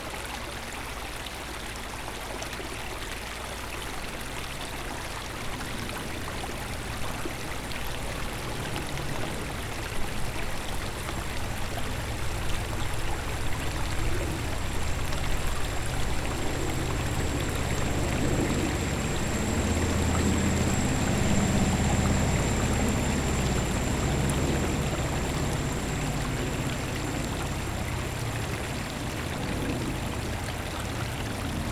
wermelskirchen, kellerstraße: eschbach/sellscheider bach - the city, the country & me: where the sellscheider creek flows into the eschbach creek
the city, the country & me: may 7, 2011